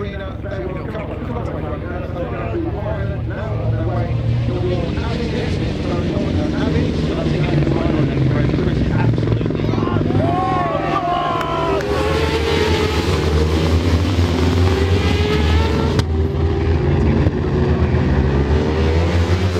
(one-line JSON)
{"title": "Silverstone Circuit, Towcester, United Kingdom - British Superbikes 2000 ... race two ...", "date": "2000-07-02 15:30:00", "description": "British Superbikes 2000 ... race two ... one point stereo mic to minidisk ...", "latitude": "52.07", "longitude": "-1.02", "altitude": "152", "timezone": "Europe/London"}